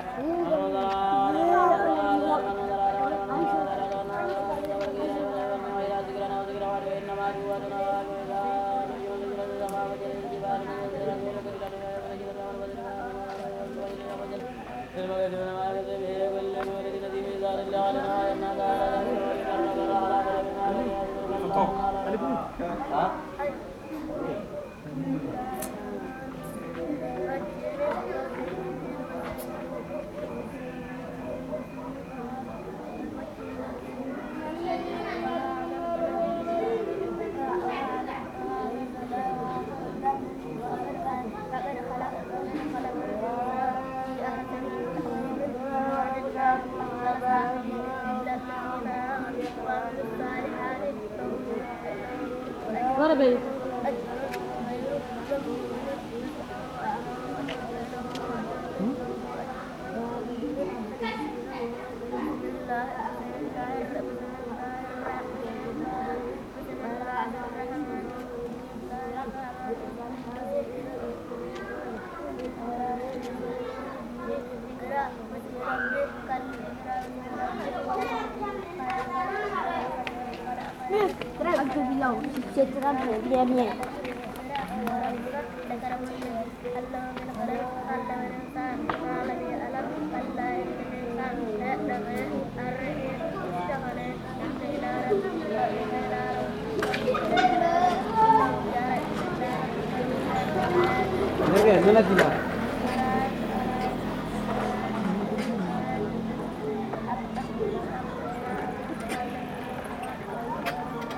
Unnamed Road, Markala, Mali - Turbo Quran 3
Turbo Qur'an 3 All together now...
Ségou, Mali, 13 January, 17:49